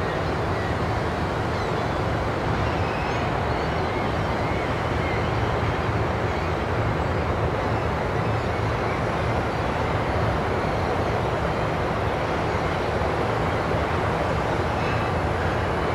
marseille, promenade louis braquier, harbour atmosphere
marseille at the sea, seagullss, a ship passing by hooting
soundmap international - social ambiences and topographic field recordings
France